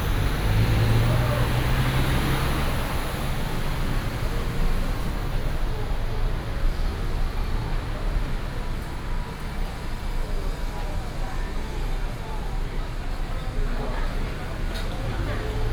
綠川東街, Taichung City - Shop with visitors
Walking on the streets, Traffic Sound, Shop with visitors